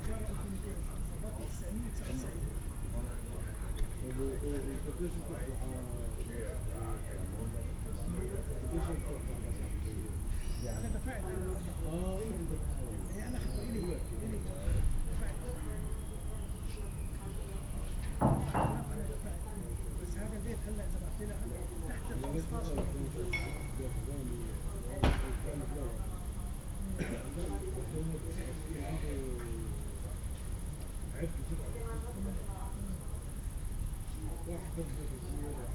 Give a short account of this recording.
Berlin Buch, Am Sandhaus, edge of the road, night ambience, men talking on a balcony, someone dumps waste, crickets, it's warm and humid, (Sony PCM D50, Primo EM172)